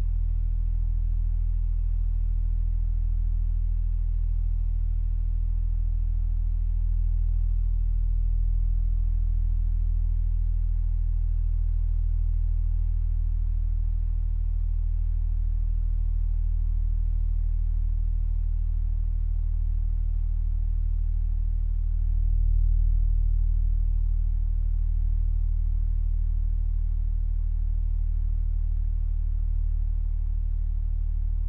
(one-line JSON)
{"title": "Poznan, at the office - fan", "date": "2012-07-27 19:53:00", "description": "a fan in my office makes a beautiful, rich, standing wave. recorder was placed on the side of the fan.", "latitude": "52.41", "longitude": "16.91", "altitude": "76", "timezone": "Europe/Warsaw"}